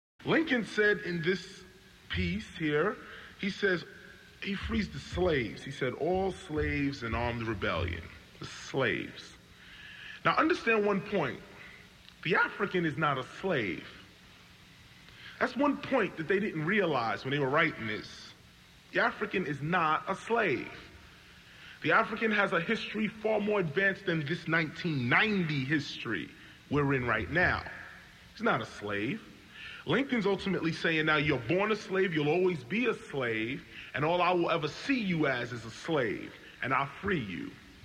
Emancipation Proclamation - Lincoln frees the slaves, KRS-One 1990